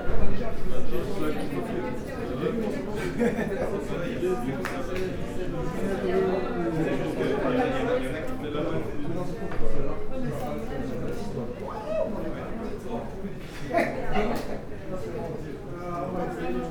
Centre, Ottignies-Louvain-la-Neuve, Belgique - Languages institute
In front of the languages institute (institut des langues vivante), end of a course. Students are discussing.
Ottignies-Louvain-la-Neuve, Belgium, 11 March 2016, 09:00